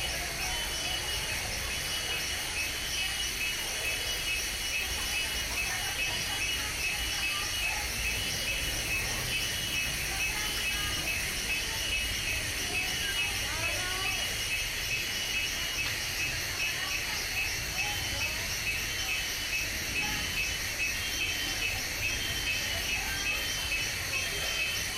Little Jungle atmosphere with inhabitants. In this audio we can find a particular sound due to the fact that within an inhabited place we find environmental characteristics as if it were a small jungle. The fundamental sound fed by a chorus of insects playing in the background is the perfect one to make us believe that we are not in a municipality, but unfortunately the voices of the people and a slight background traffic act as a sound signal that reminds us that we are in a inhabited place. Finally, the king of this environment and the one that allows it to be quite pleasant, is the "Chicharra" who with its particular sound mark emits a very strong sound, so much so that it manages to stand out from the other insects that are present in the place.
Tape recorder: Olympus DIGITAL VOICE RECORDER WS-852